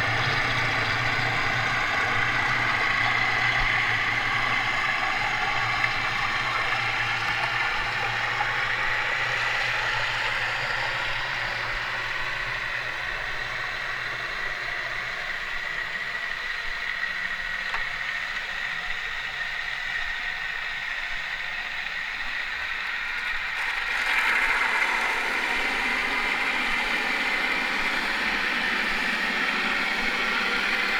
Gdańsk, Poland - Hydrofon
Hydrofon - nagranie z nabrzeża.